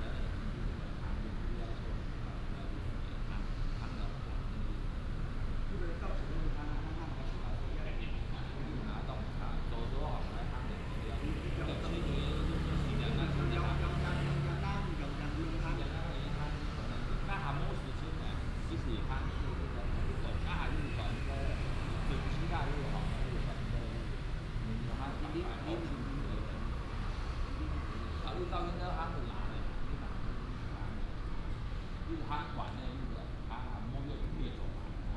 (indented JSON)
{
  "title": "集福宮, Hsinchu City - In the square of the temple",
  "date": "2017-09-27 15:03:00",
  "description": "In the square of the temple, traffic sound, bird sound, Binaural recordings, Sony PCM D100+ Soundman OKM II",
  "latitude": "24.78",
  "longitude": "121.02",
  "altitude": "104",
  "timezone": "Asia/Taipei"
}